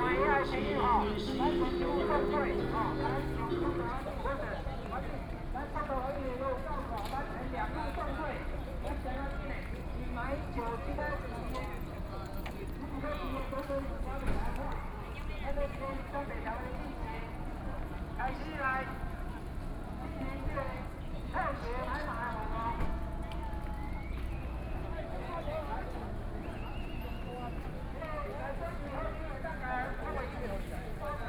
Zhongshan S. Rd., Taipei City - Protest
Protest, Sony PCM D50 + Soundman OKM II